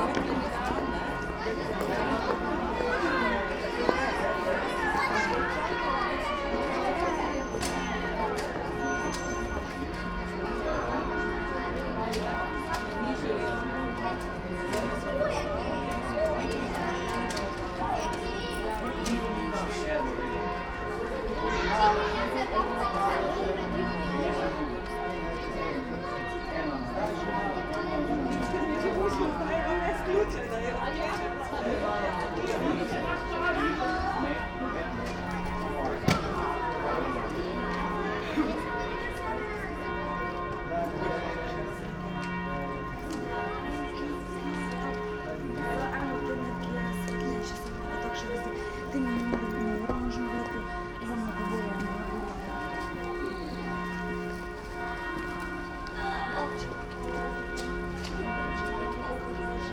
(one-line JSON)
{"title": "Partizanska cesta, Maribor, Slowenia - yard ambience, kids, 6pm bells", "date": "2017-03-30 18:00:00", "description": "kids and parents waiting in front of a house, other kids come & go. 6pm church bells\n(Sony PCM D50, Primo EM172)", "latitude": "46.56", "longitude": "15.65", "altitude": "276", "timezone": "Europe/Ljubljana"}